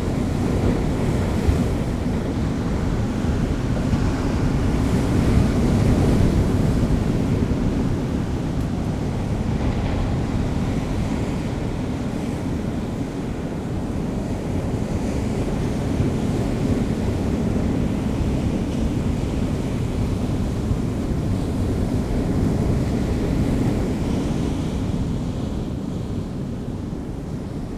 Bamburgh Lighthouse, The Wynding, Bamburgh, UK - incoming tide ...
incoming tide ... in the lee of a wall ... blowing a hooley ... lavalier mics clipped to a bag ...